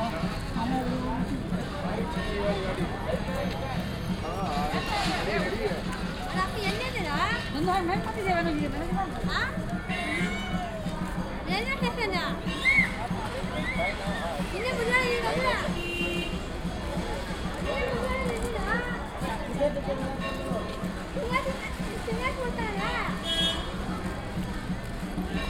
Mumbai, Dadar Central, Taxis station
India, Mumbai, Railway station, binaural